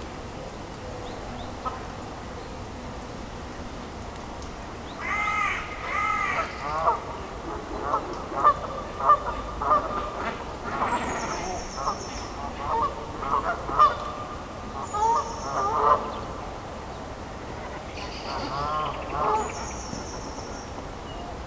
Maintenon, France - Cormorants and Canada goose
Some cormorants and Canada goose are living on this small island, in the middle of the pond. On the morning when the sun is timidly awakening, these birds make a lot of noise.
25 December, 09:10